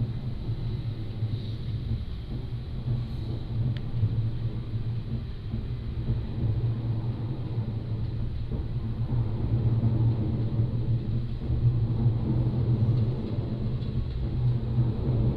Yuli Township, Hualien County - under the railroad tracks
under the railroad tracks
Hualien County, Taiwan